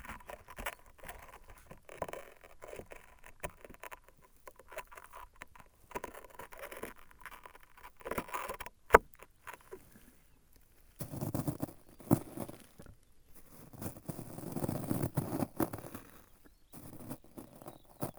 Fraissinet-de-Lozère, France - Granite stones
The Lozere mounts. This desertic area is made of granite stones. It's completely different from surroundings. Here, I'm playing with the stones, in aim to show what is different with it. As I want to show it screechs a lot, I hardly scrub stones.
Mas-d'Orcières, France, 28 April 2016, 12:40